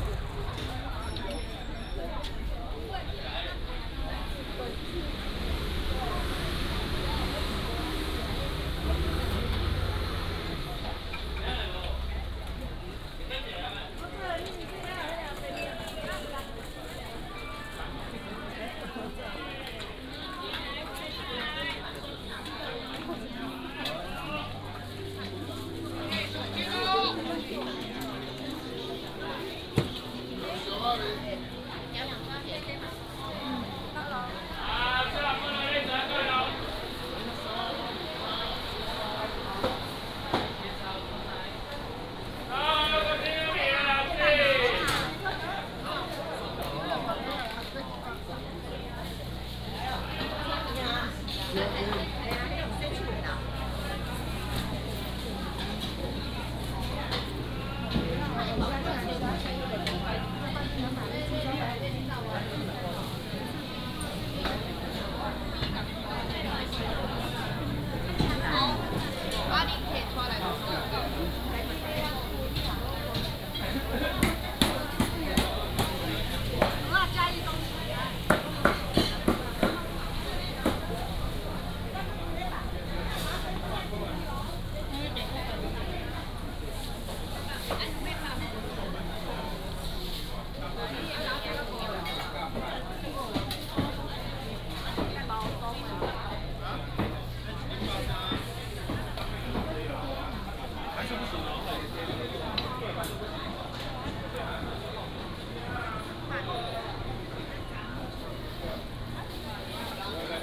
台南東門市場, Tainan City - Walking in the traditional market
Walking in the traditional market